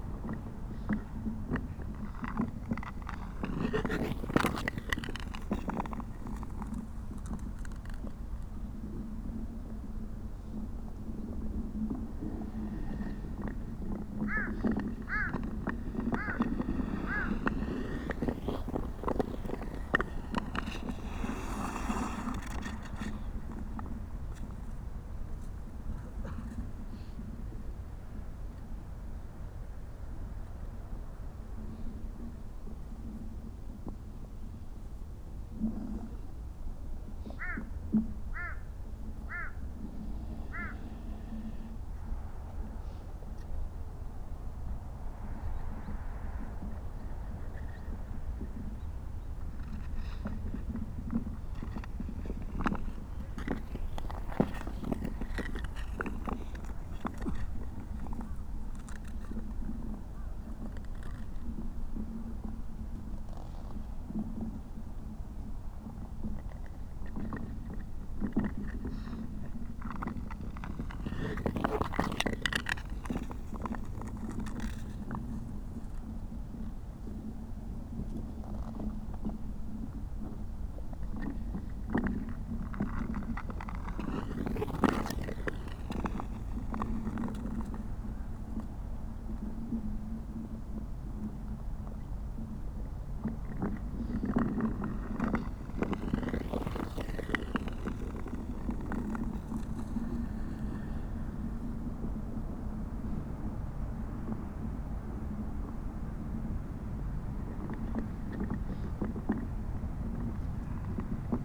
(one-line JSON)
{"date": "2021-01-10 13:00:00", "description": "Ice skater on frozen lake...a solitary guy was ice skating around and around in a circle...in fresh powdery dry snow on the frozen solid lake...my 1st perspective was to the side, 2nd perspective was inside his circle...", "latitude": "37.87", "longitude": "127.69", "altitude": "73", "timezone": "Asia/Seoul"}